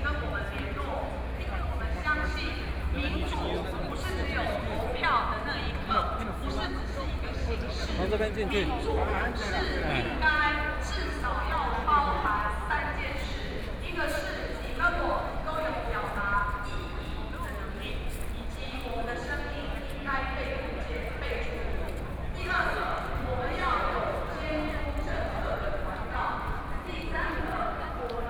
Jinan Rd, Taipei City - Protest
Nonviolent occupation, To protest the government's dereliction of duty and destructionㄝZoom H4n+ Soundman OKM II